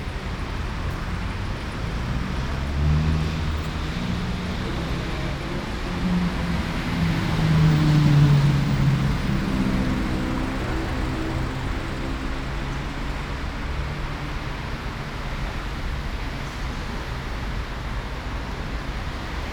"It’s five o’clock on Wednesday with bells and post-carding in the time of COVID19" Soundwalk
Chapter CXIX of Ascolto il tuo cuore, città. I listen to your heart, city
Wednesday, July 22th 2020. San Salvario district Turin, walking to Corso Vittorio Emanuele II and back, four months and twelve days after the first soundwalk during the night of closure by the law of all the public places due to the epidemic of COVID19.
Start at 4:52 p.m. end at 5 :19 p.m. duration of recording 29’13”
As binaural recording is suggested headphones listening.
The entire path is associated with a synchronized GPS track recorded in the (kmz, kml, gpx) files downloadable here:
Go to similar Chapters n. 35, 45, 90, 118
Torino, Piemonte, Italia